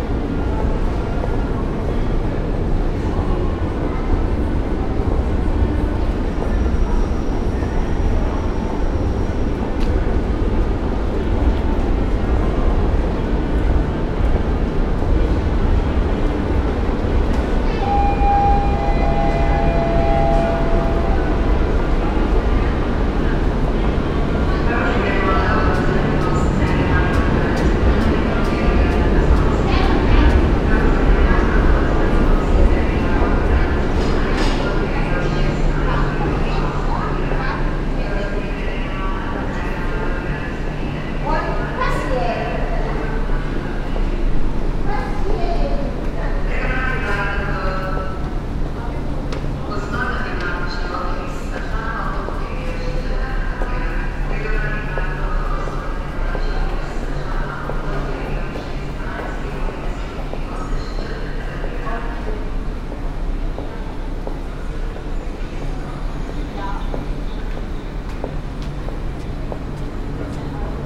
train station, Ljubljana, Slovenia - rain drops keep falling ...
walk through the underpass, open and closed above, storm approaching, at the and with free impro of the song rain drops keep falling on my head and brakes beautifully squeaking as refrain